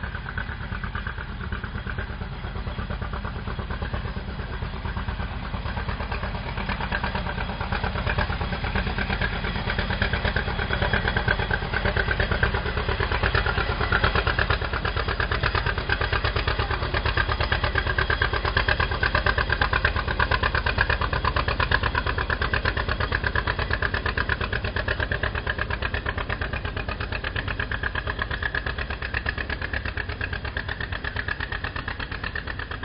Eifelzoo, Deutschland - Parkbahn fährt vorbei / Park railway passes
Die Parkbahn, eine ehemalige Kleinbahn des lokalen Steinbruches fährt mit Fahrgästen von links nach rechts vorbei. / The park railway, a former narrow-gauge railway of the local quarry drives past with passengers from left to right.
7 July 2015, 14:01